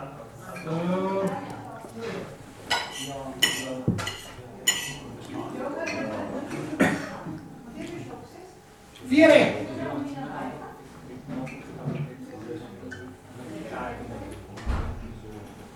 {
  "title": "falkenstein - offene kellertür (czermak)",
  "date": "2009-09-28 19:55:00",
  "description": "offene kellertür (czermak)",
  "latitude": "48.72",
  "longitude": "16.59",
  "altitude": "288",
  "timezone": "Europe/Berlin"
}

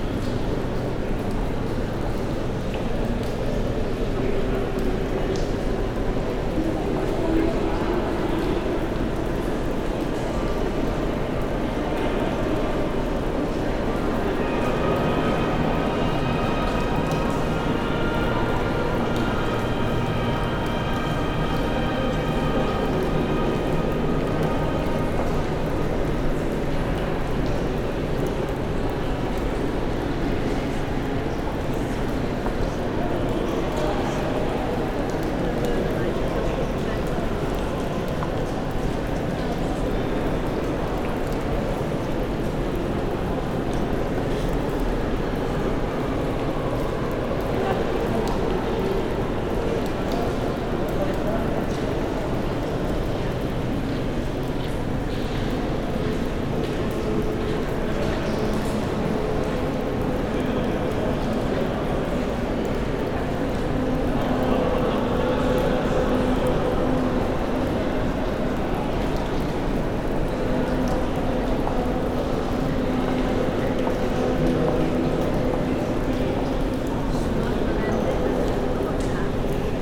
{
  "title": "Basilica Cistern, Yerebatan Sarayi, Istanbul",
  "date": "2010-02-15 15:58:00",
  "description": "Basilica Cistern or Yerebatan Sarayi, Roman water supply from 532 AD. Unfortunately they play music inside for tourists",
  "latitude": "41.01",
  "longitude": "28.98",
  "altitude": "42",
  "timezone": "Europe/Tallinn"
}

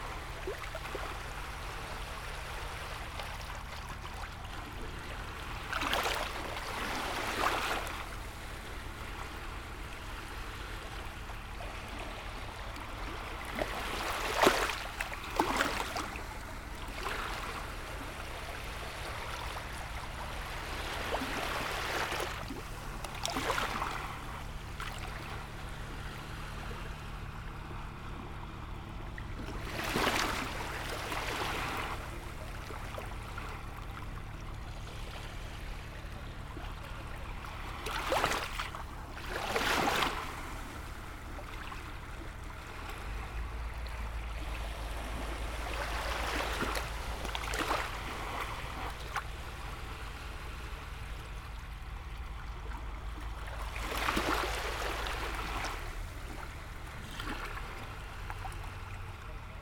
{
  "title": "Ventspils, Latvia, at pier",
  "date": "2021-07-14 10:25:00",
  "description": "just re-visiting the place after 9 years...",
  "latitude": "57.40",
  "longitude": "21.53",
  "altitude": "1",
  "timezone": "Europe/Riga"
}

